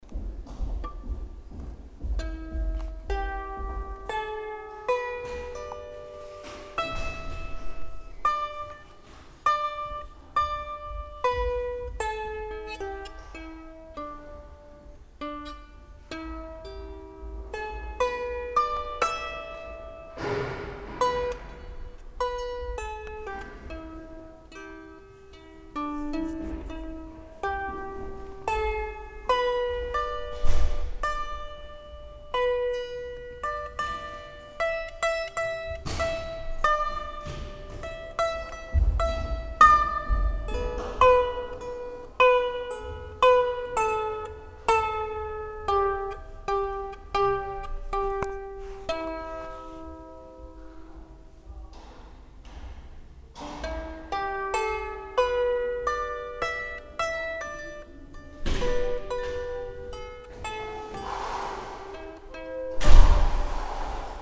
refrath, mohnweg, waldorfschule, musiktrakt
nachmittags in schulkorridor, spielen einer tischharfe, schritte und türen
soundmap nrw - social ambiences - sound in public spaces - in & outdoor nearfield recordings